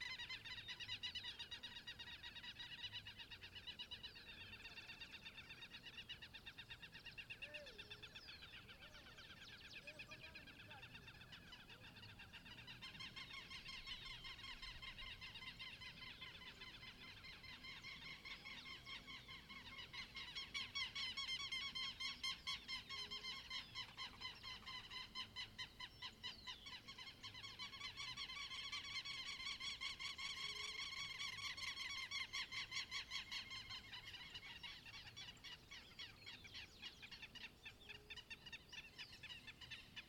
Santuario, Antioquia, Colombia - Santuario Soundscape morning
Field recording capture on a rural area in Santuario, Antioquia, Colombia.
The recording was made at 6:30 am, cloudy Sunday's morning.
Recorded with the inner microphones of the Zoom H2n placed at ground's level.